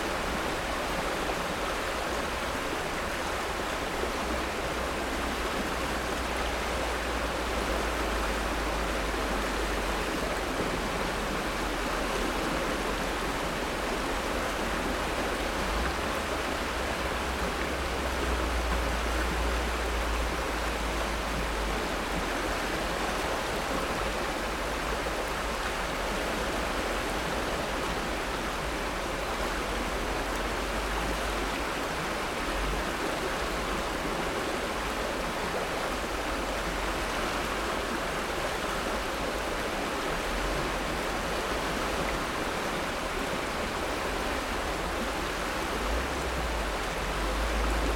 Bow River bend near Banff
high water flow on a side tributary of the Bow River
21 June 2012, 08:30